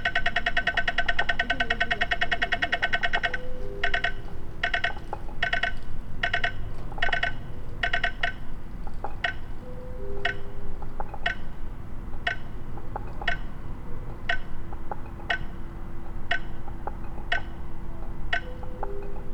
Umeå, Gammliavagen Rothoffsvagen junction, traffic lights
Traffic lights and Gammliahallen PA in background
Umeå, Sweden, 16 May 2011